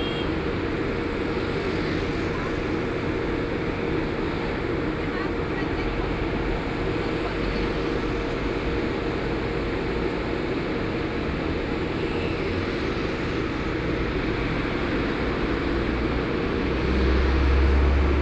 South Henan Road, Shanghai - Large truck
Large truck tires are changed, Traffic Sound, Binaural recording, Zoom H6+ Soundman OKM II